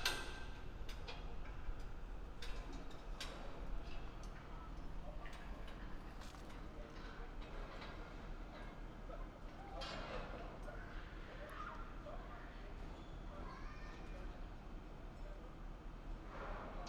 Wynyard Quarter, Auckland, New Zealand - Hammering metal
Riggers erecting scaffold for construction of Wynyard Quarter apartments.
PCM-D50 w on-board mics.